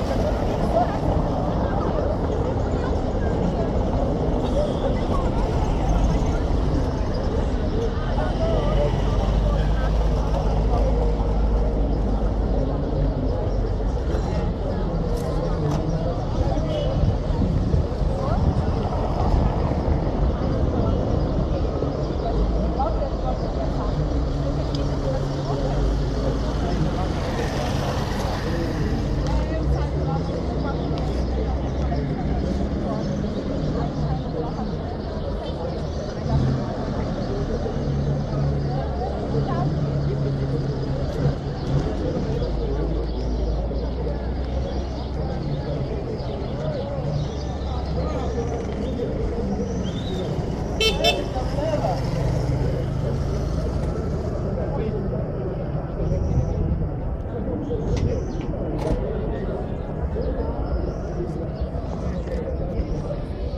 Recorded audio while we waiting for the intercity bus, in the bus stop next door to the local hospital and the market.
Brazil, 4 April 2014